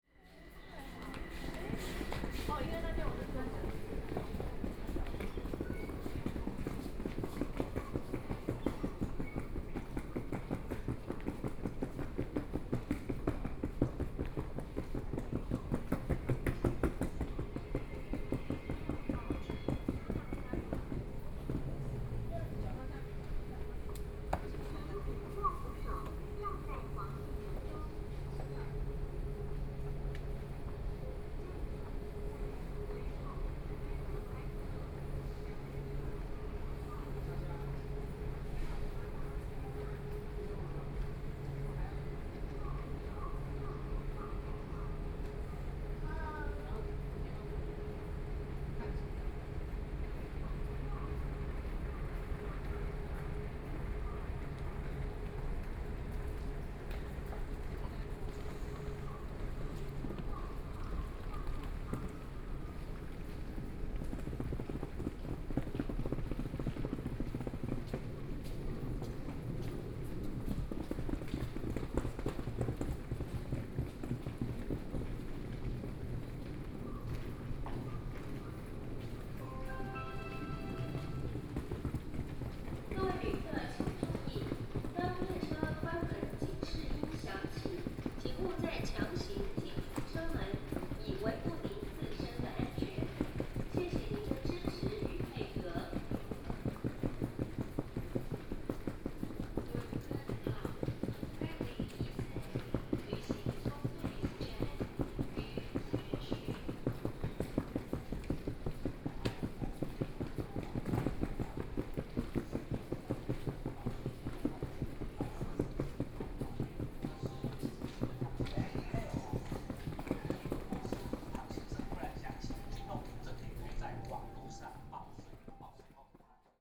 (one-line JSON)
{"title": "Formosa Boulevard Station, Kaohsiung City - walking in the station", "date": "2014-05-13 19:30:00", "description": "walking in the station, Hand luggage\nBinaural recordings", "latitude": "22.63", "longitude": "120.30", "altitude": "12", "timezone": "Asia/Taipei"}